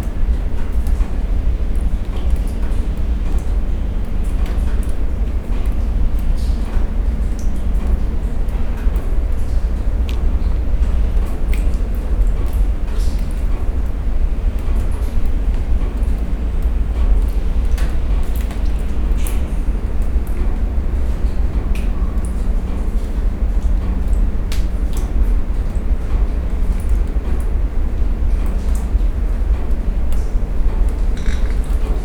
Beyoğlu/Istanbul Province, Turkey - binaural drips
Leak in the roof. Dripping, heavy drones from ships in background. Binaural DPA mics, DAT recorder